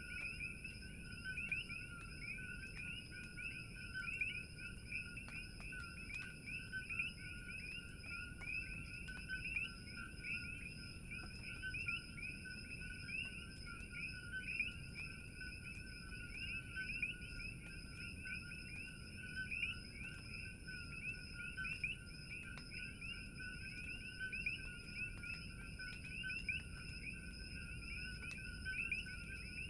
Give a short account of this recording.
sound of Coqui's -tree frogs- recorded in February 2008 on east side of Big Island Hawaii ... Ten years ago they still weren't at this location, to my knowledge they are spread now throughout the island and treated as a pest...it is interesting to realise how fast a sound can ended up being a landmark, associated with certain location